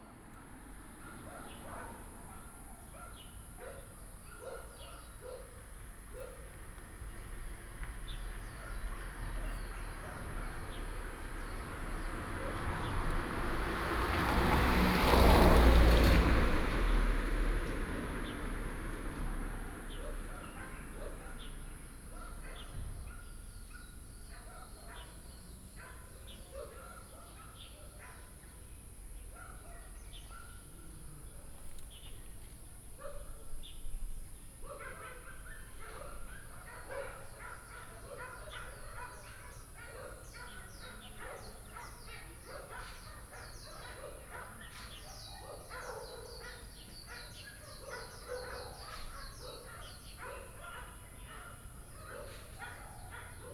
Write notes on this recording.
In the morning, Birds singing, traffic sound, Binaural recordings, Sony PCM D50 + Soundman OKM II